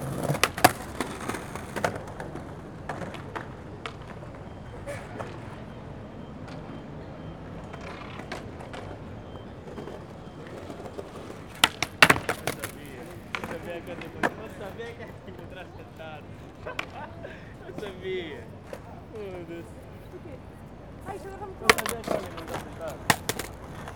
Lisbon, Portugal - Skaters Lx
A group of young skaters try new tricks.
Zoom H4n
Lisboa, Portugal, 18 April 2016